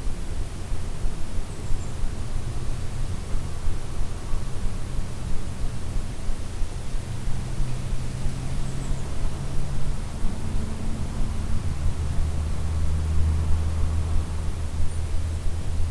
Płonina, Płonina, Polska - Riuny Zamku Niesytno - Dźwięk zastygły w czasie.
Projekt „Dźwięk zastygły w czasie” jest twórczym poszukiwaniem w muzyce narzędzi do wydobycia i zmaterializowania dźwięku zaklętego w historii, krajobrazie, architekturze piastowskich zamków Dolnego Śląska. Projekt dofinansowany ze środków Ministerstwa Kultury i Dziedzictwa Narodowego.